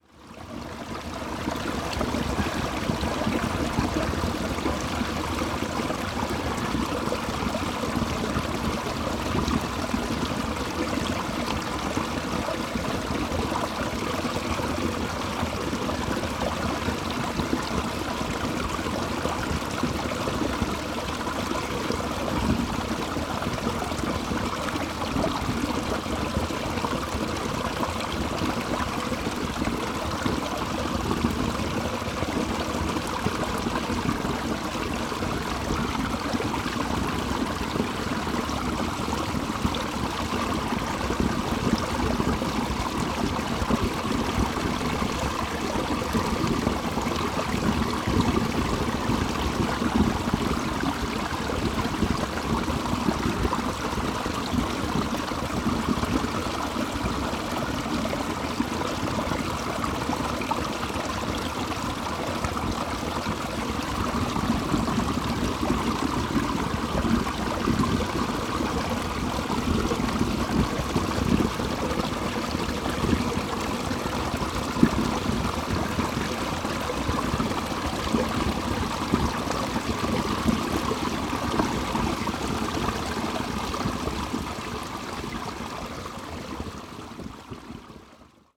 göhren, strandpromenade: wasserbecken - the city, the country & me: water basin, trick fountains
drain of a water basin
the city, the country & me: october 2, 2010